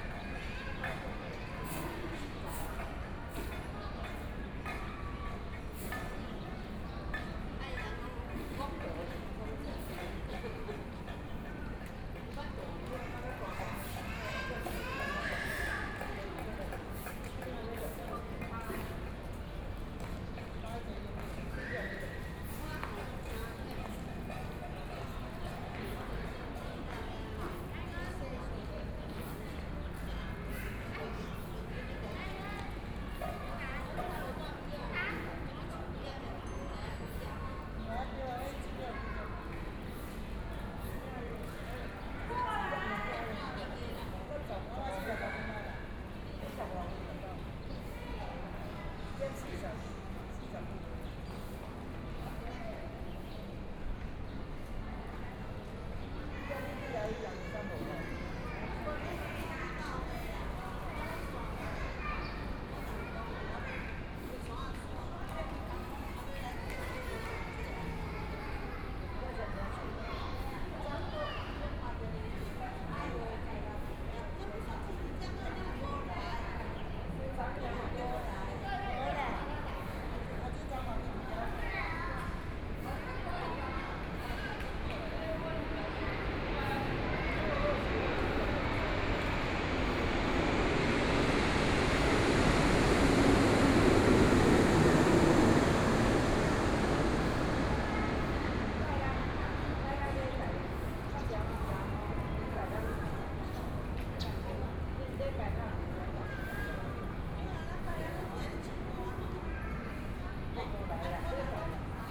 Chat with a group of elderly, Kids game sound, The distant sound of the MRT train, Aircraft flying through, Traffic Noise, Binaural recordings, Sony Pcm d50+ Soundman OKM II
9 October 2013, 13:45